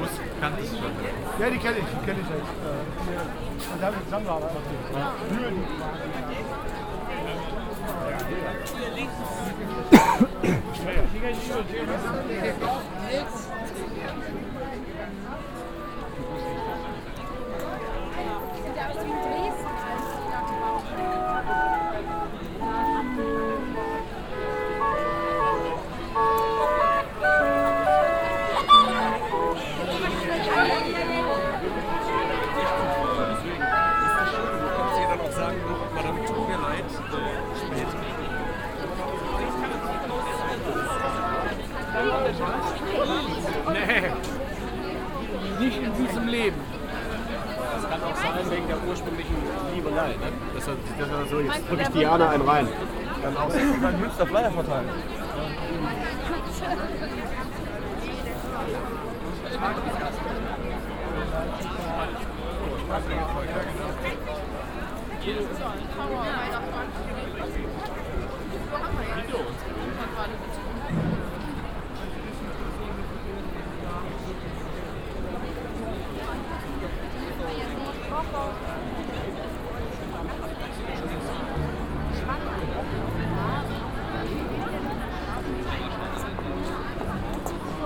weihnachtsmarkt ambience auf der domplatte abends.drehorgelklänge und kirchglocken im regen, fröhlichen treiben zahlreicher glühweinkonsumenten zwischen diversen fress- und accessoirständen
soundmap nrw - weihnachts special - der ganz normale wahnsinn
social ambiences/ listen to the people - in & outdoor nearfield recordings
domplatte. weihnachtsmarkt, 23 December 2008